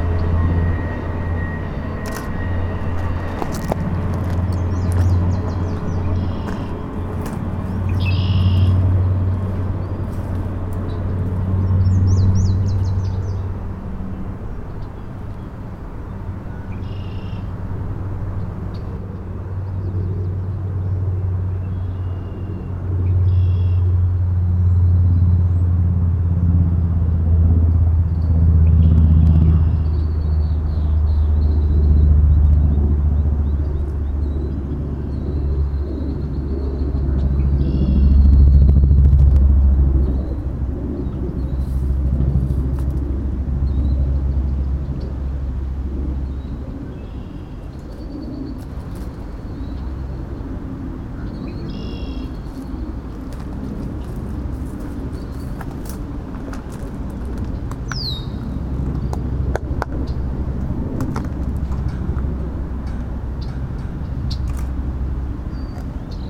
Trihydro industrial site, Lockport, IL, USA - Photographing geo-engineering along Illinois Canal
Just downstream from the asian carp electrified fence, an experimental barrier to keep the invading Asian Carp from reaching the Lake Michigan. Trihydro Corp. is assisting the Army Corps of Engineers in ongoing dredging and engineering operations, morphing these waterways beyond recognition.